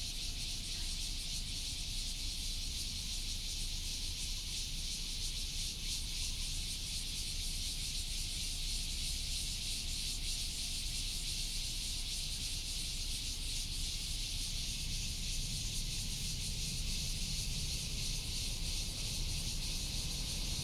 荷顯宮, Taoyuan Dist. - In the square of the temple
In the square of the temple, traffic sound, birds sound, Cicada cry